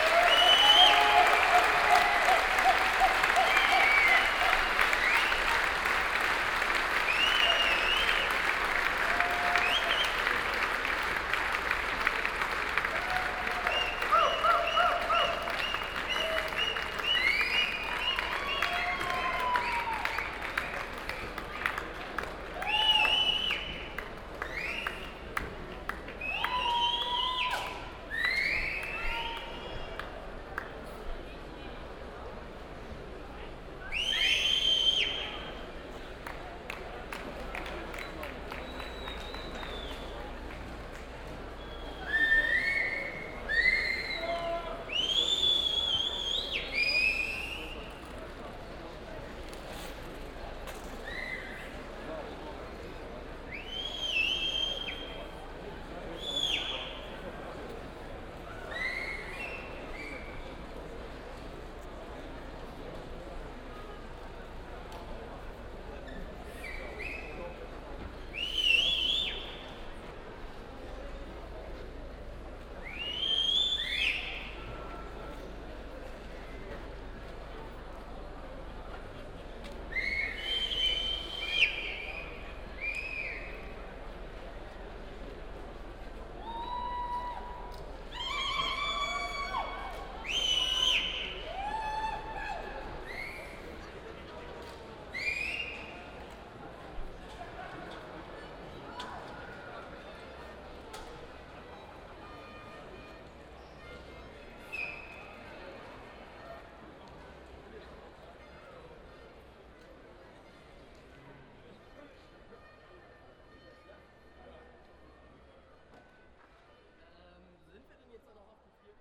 moers, moers festival, tent atmo and announcement - moers, moers festival, fred frith - cosa brava and final applaus
soundmap nrw - social ambiences and topographic field recordings
Moers, Germany, 4 June 2010